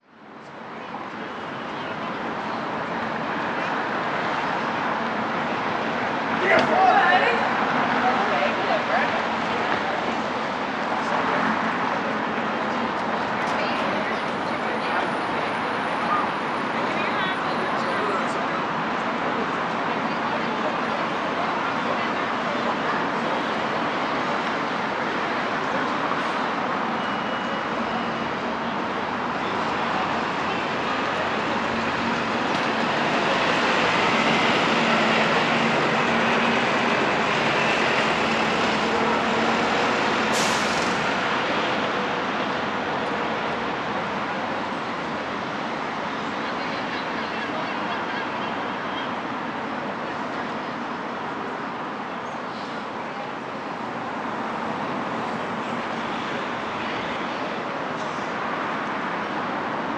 Recording of buses passing on their route, some teenagers shouting in the area, the chatter from pedestrians, and a loud motorcycle riding by.